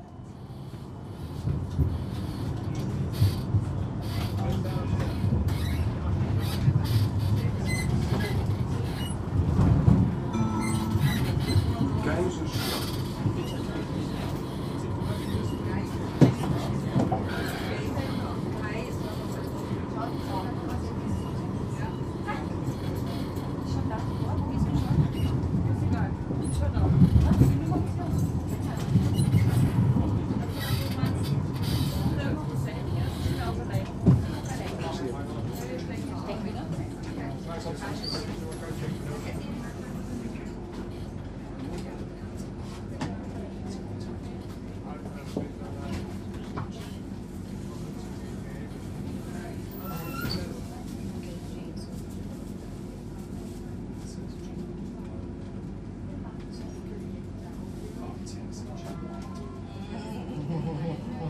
Tram Line 1, Amsterdam
Interior of Tram Line 1 in Amsterdam.